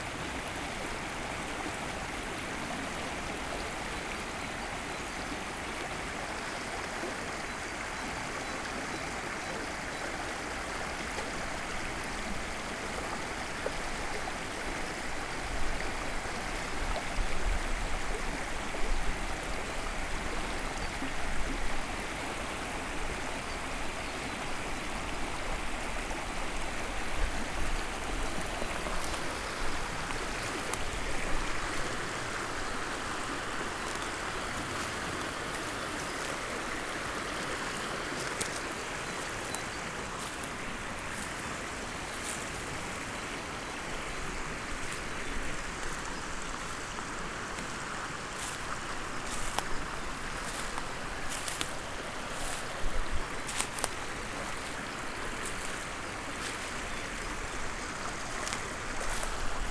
Klein Wall, Löcknitztal, Grünheide, Deutschland - sound of river Löcknitz
sound of the beautiful river Löcknitz, at village Klein Wall, near bridge
(Sony PCM D50, DPA4060)
Klein Wall, Grünheide (Mark), Germany, 11 April 2015